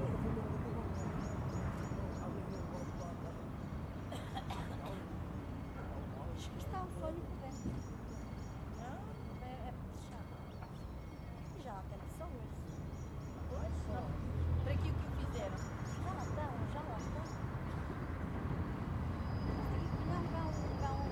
Largo da Estacao, Pinhão, Portugal - Estação, Pinhão, Portugal
Estação, Pinhão, Portugal Mapa Sonoro do Rio Douro Railway Station, Pinhao, Portugal